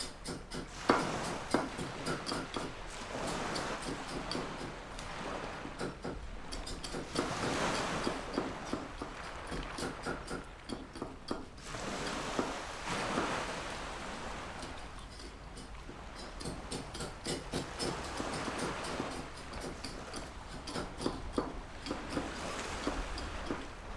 芙蓉澳, Nangan Township - Small fishing village
Small fishing village, Small pier, Sound of the waves
Zoom H6+ Rode NT4
連江縣, 福建省, Mainland - Taiwan Border